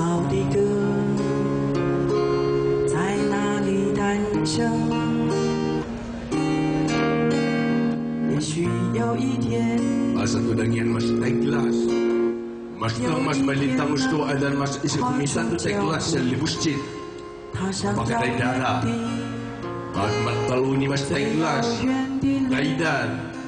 Ketagalan Boulevard, Taipei - Protest
Ketagalan BoulevardTaiwan aborigines protest, Aboriginal singer, Sony ECM-MS907, Sony Hi-MD MZ-RH1
台北市 (Taipei City), 中華民國, 6 August 2010